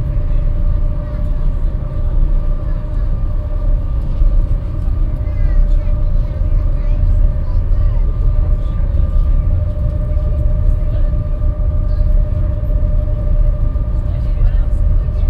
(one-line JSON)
{"title": "vancouver, seabus to north vancouver, on it's way", "description": "sound of the motor and people talking in the sea bus cabin\nsoundmap international\nsocial ambiences/ listen to the people - in & outdoor nearfield recordings", "latitude": "49.30", "longitude": "-123.10", "timezone": "GMT+1"}